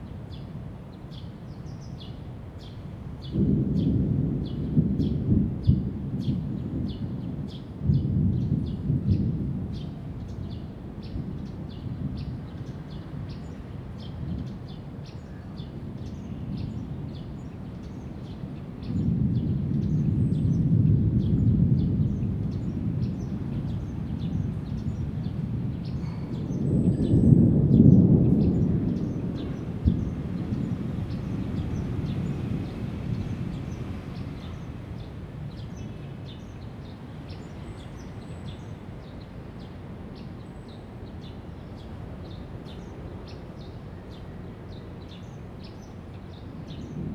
in the Park, Thunder sound
Zoom H2n MS+XY
Taipei City, Taiwan, July 2015